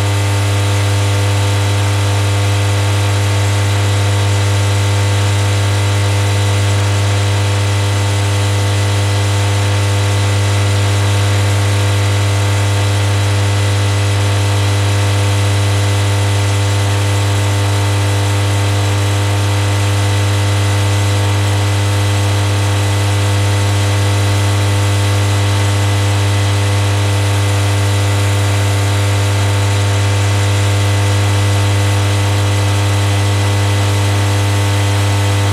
Sakalų g., Ringaudai, Lithuania - Small electrical substation noise
Close up recording of a humming electrical substation transformer box. Recorded with ZOOM H5.
21 March, 10:00